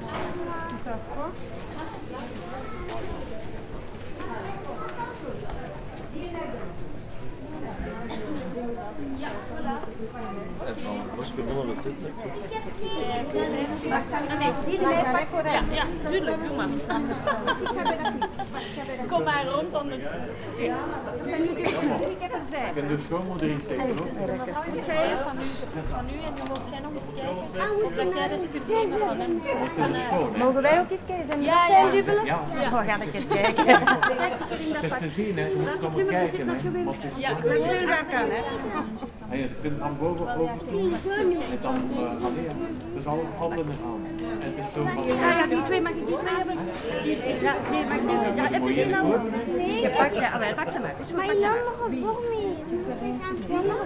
la brocante de hal / Hal flea market / World listening day
Halle, Belgium